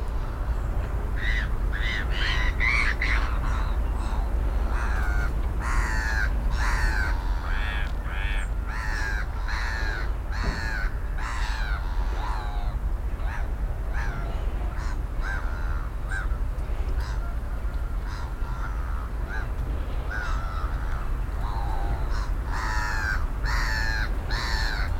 Gulls going nuts on beautiful coastal walk opposite Penryn.
Penryn, Cornwall, UK, 5 November